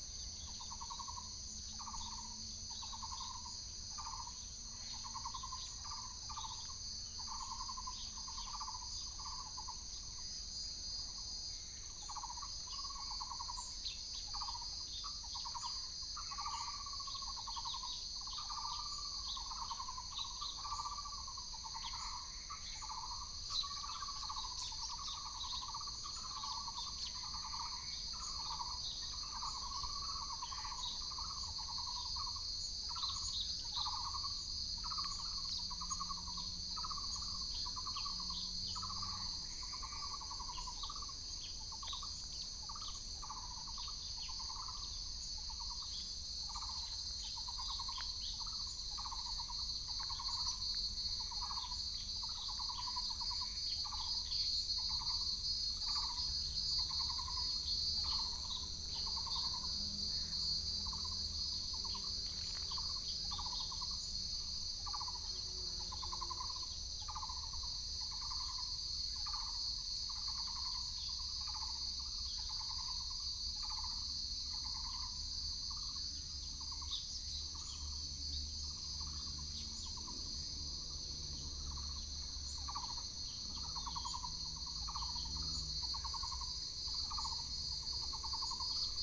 沙坑農路, Hengshan Township - birds sound
birds sound, Morning in the mountains, Insects sound, Binaural recordings, Sony PCM D100+ Soundman OKM II
Hsinchu County, Taiwan, 12 September 2017